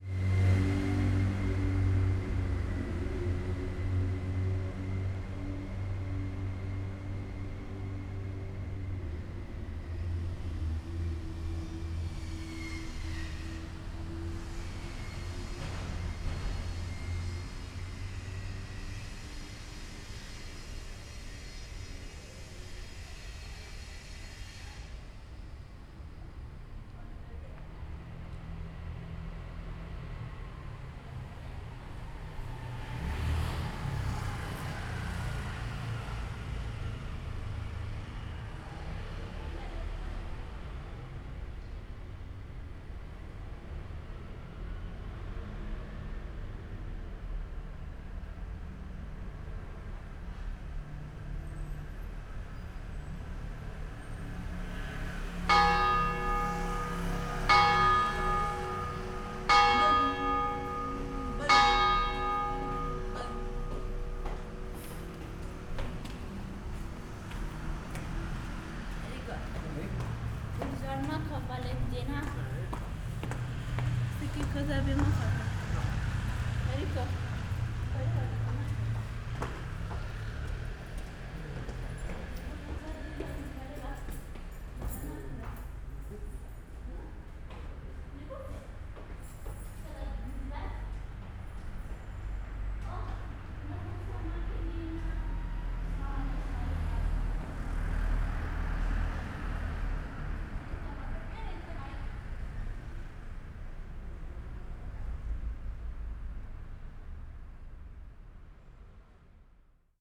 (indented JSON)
{
  "title": "near Piazza Cornelia Romana, Triest, Italien - 4pm churchbells, ambience",
  "date": "2013-09-07 16:00:00",
  "description": "4pm churchbells of Chiesa Beata Vergine del Soccorso, heard on the steps of a narrow street.\n(SD702, DPA4060)",
  "latitude": "45.65",
  "longitude": "13.77",
  "altitude": "24",
  "timezone": "Europe/Rome"
}